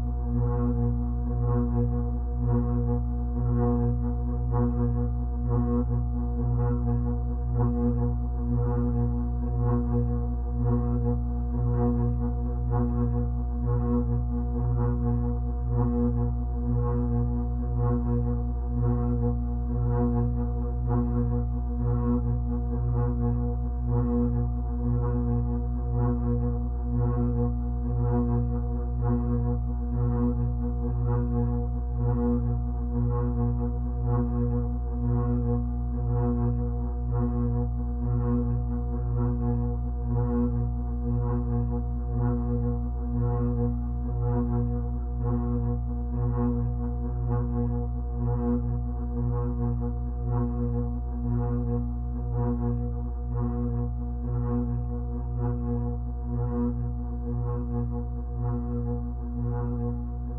South River City, Austin, TX, USA - Jardine's Ceiling Fan
Recorded with a pair of JrF c-series contact mics and a Marantz PMD661